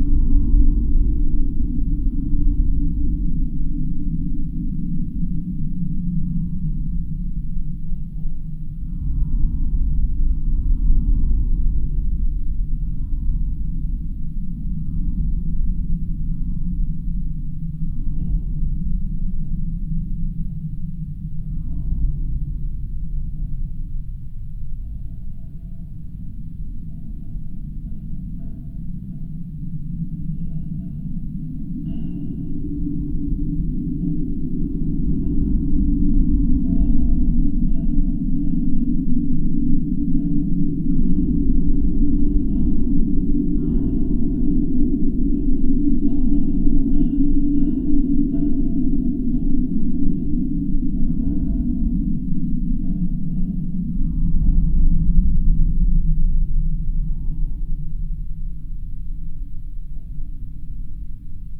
Gaižiūnai, Lithuania, fence
metallic fence between Vyzuonos botanical reserve and crossings. geophone recording, low frequencies. listening it with headphones on-the-site and seeing all crossings it reminds me some kind of deserted taiga...